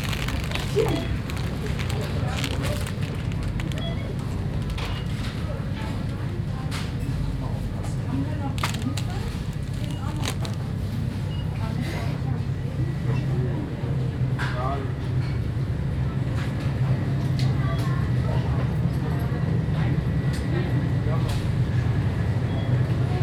Südviertel, Essen, Deutschland - essen, rüttenscheider str, bio supermarket
In einem Bio Supermarkt. Der Klang von Einkaufswagen, die Stimmen von Menschen, das Piepen der Kasse und das Brummen der Kühlboxen.
Inside a bio supermarket. The sound of shopping carts, voices, the beep of the cash register and the seep hum of the refrigerators.
Projekt - Stadtklang//: Hörorte - topographic field recordings and social ambiences
Essen, Germany, 26 April 2014, 11:40